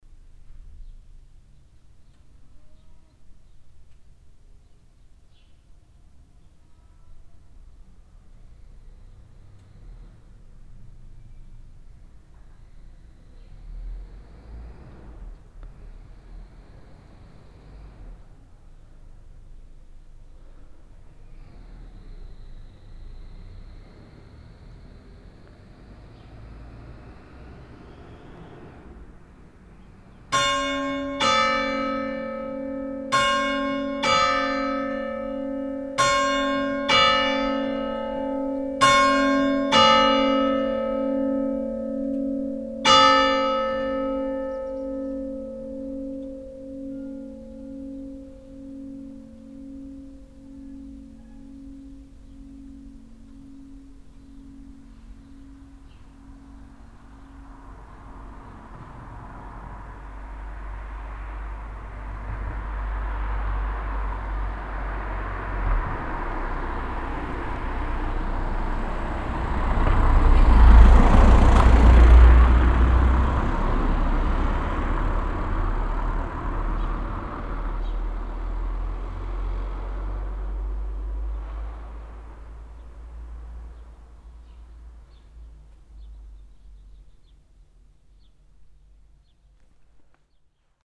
knaphoscheid, church, bells
At the Saint-Michel church in Knaphoscheid. The ambience of the small town followed by the one o clock bells and a car passing by.
Knaphoscheid, Kirche, Glocken
Bei der St. Michel-Kirche in Knaphoscheid. Die Umgebung der kleinen Stadt gefolgt von der 1-Uhr-Glocke und einem vorbeifahrenden Auto.
Knaphoscheid, église, cloches
À l’église Saint-Michel de Knaphoscheid. L’atmosphère de la petite ville suivie du carillon de 13h00 et d’une voiture qui passe.
Project - Klangraum Our - topographic field recordings, sound objects and social ambiences
Eschweiler, Luxembourg